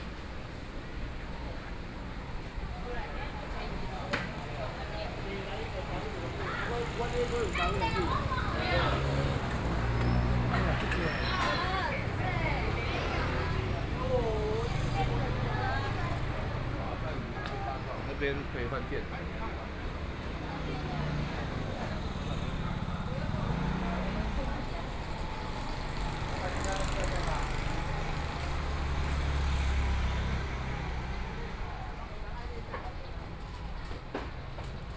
Gongyuan Rd., Luodong Township - traditional market
Walking in different neighborhoods, Walking through the traditional market, Traffic Sound
Sony PCM D50+ Soundman OKM II
27 July 2014, 10:45